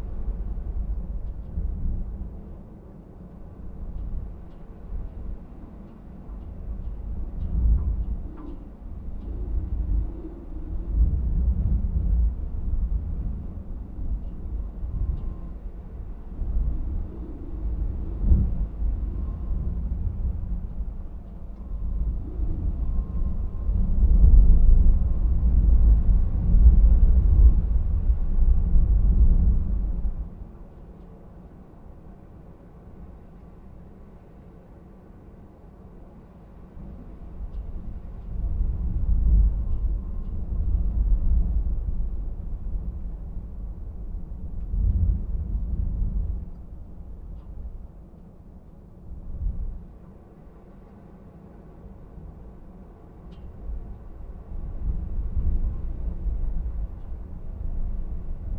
Trégastel, France - Wind From Inside a chimney vent
Des vent violent entendus depuis l'intérieur de la cheminée.
Wild wind from inside a chimney vent.
/Oktava mk012 ORTF & SD mixpre & Zoom h4n